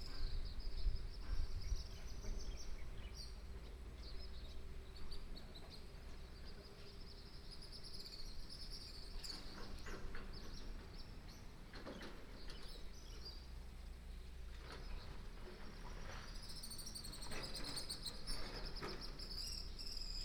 Jiajinlin, Dawu Township, Taitung County - Entrance to the village
Construction sound, Bird sound, Swallow, Entrance to the village, Train passing
Binaural recordings, Sony PCM D100+ Soundman OKM II
13 April 2018, 16:35